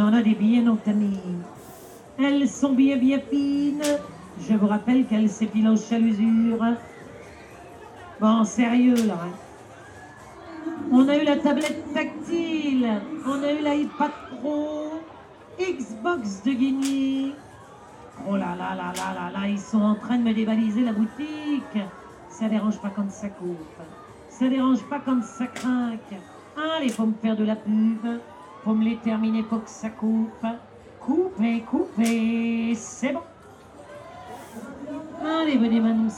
Esplanade, Saint-Omer, France - St-Omer - ducasse
St-Omer (Nord)
Ducasse - fête foraine
Ambiance - extrait 1
Fostex FR2 + AudioTechnica BP4025